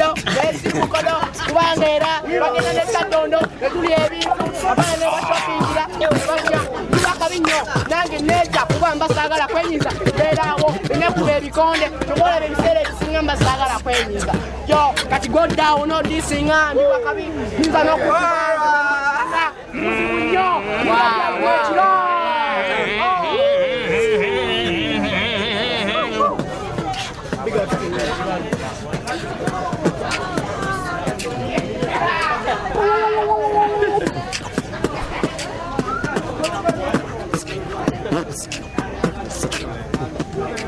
Sharing Youth Centre, Nsambya, Kampala, Uganda - Breakdance Project Uganda - beat boxing...
…after a day of making recordings with members of the Breakdance Project Uganda and its founding director, Abraham ‘Abramz’ Tekya, I catch up with a group “relaxing” in freestyle “beat boxing”…